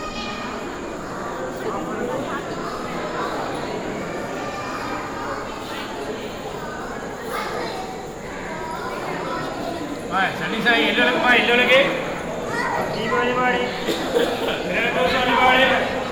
meenakshi temple - madurai, tamil nadu, india - meenakshi temple
An evening stroll through the Meenakshi Temple compound.
Recorded November 2007
27 November 2007, 16:21